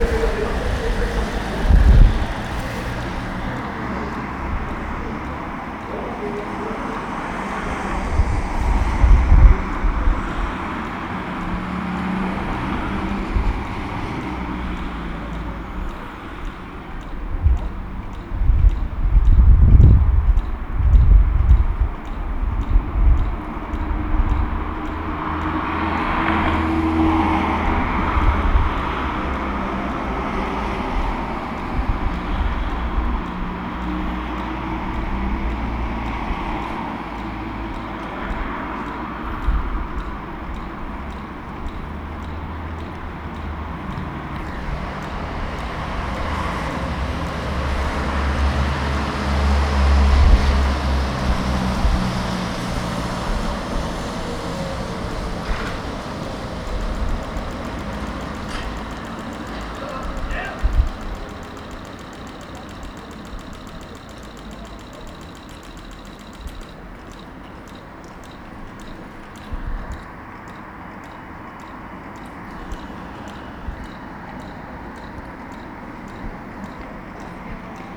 Zelena brána, Pardubice, Česko - Zelena brána
Recorded as part of the graduation work on sound perception.